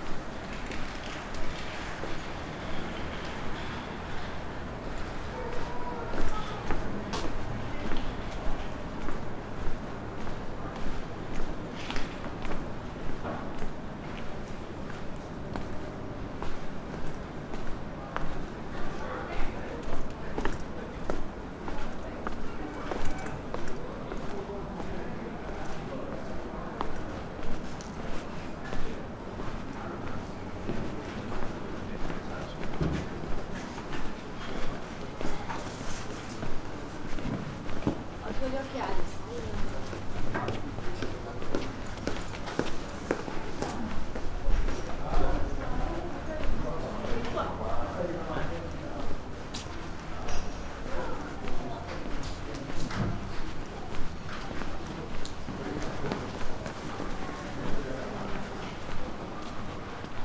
grey and cold spring monday, walking the streets ... passers-by wearing audible clothing